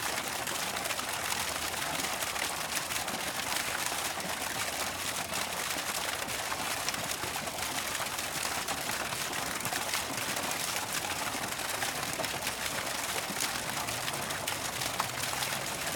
Botanique, Rue Royale, Saint-Josse-ten-Noode, Belgium - Little fountain
Région de Bruxelles-Capitale - Brussels Hoofdstedelijk Gewest, België - Belgique - Belgien, European Union, 19 June 2013